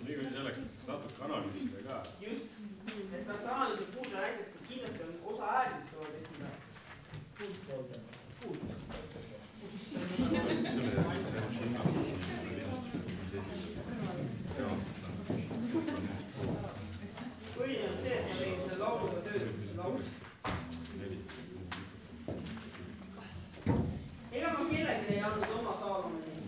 Choire practice in school, Tartu, Estonia
choire, talk, footsteps
Tartu maakond, Eesti, European Union